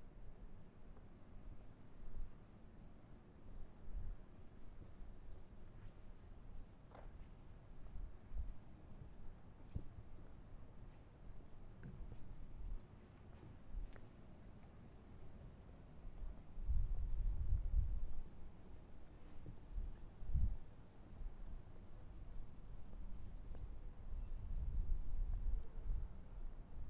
Pateo de São Miguel - Patéo de S. Miguel>> Templo>>P. Giraldo >> R.5 Outubo>> Sé
Late afternoon walk around old Evora Patéo de S. Miguel>> Templo>>P. Giraldo >> R.5 Outubo>> Sé
Évora, Portugal